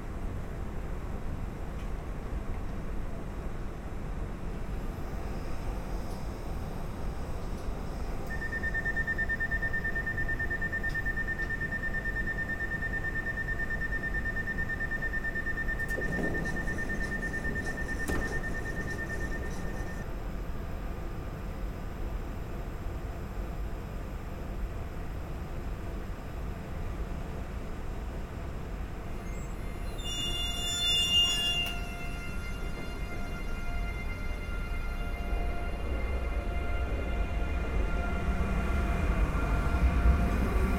Maintenon, France - Maintenon station
On the Maintenon station platform, my brother Nicolas will catch his train to Paris. This is an early quiet morning on the platform, with a lot of workers commuting to Paris.